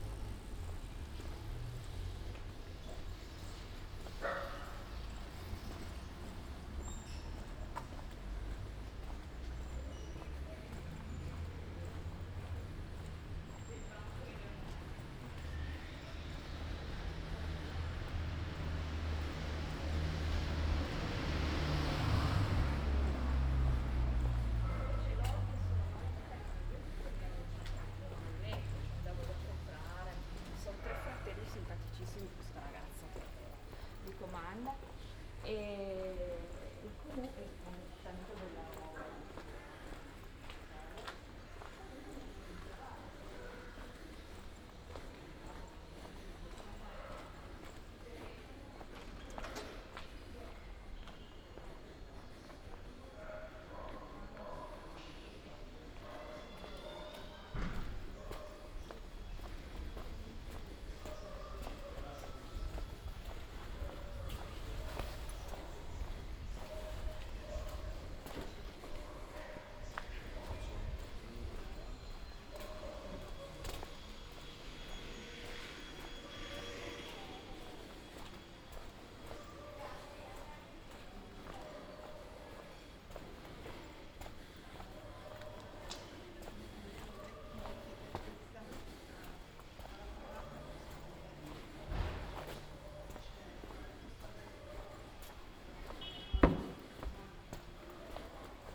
Ascolto il tuo cuore, città. I listen to your heart, city. Several chapters **SCROLL DOWN FOR ALL RECORDINGS** - “Posting postcards on May 1st at the time of covid19” Soundwalk
“Posting postcards on May 1st at the time of covid19” Soundwalk
Chapter LXII of Ascolto il tuo cuore, città. I listen to your heart, city.
Tuesday April 28th 2020. Walking to outdoor market and posting postcard, San Salvario district, fifty two days after emergency disposition due to the epidemic of COVID19.
Start at 11:23 a.m. end at h. 11:50 a.m. duration of recording 27’17”
The entire path is associated with a synchronized GPS track recorded in the (kml, gpx, kmz) files downloadable here: